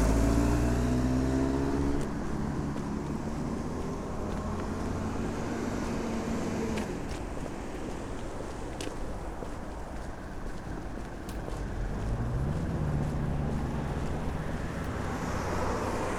Lithuania, Utena, evening walk
walking through the city in the evening